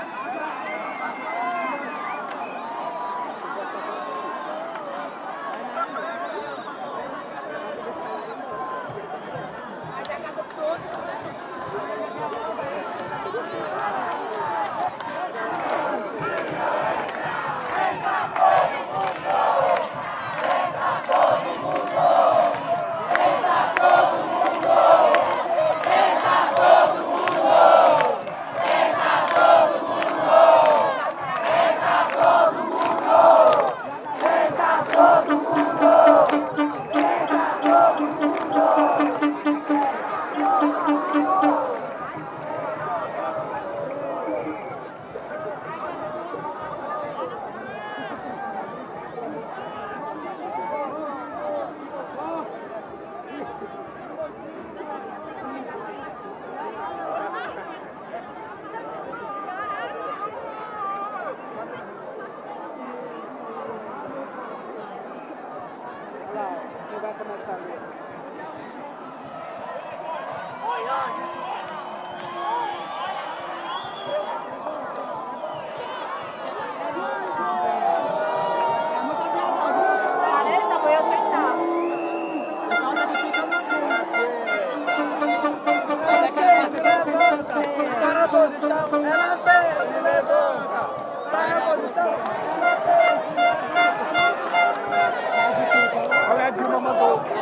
Congresso Nacional - Manifestações
Popular manifestation in front of the brazilian congress.
Federal District, Brazil, 20 June 2013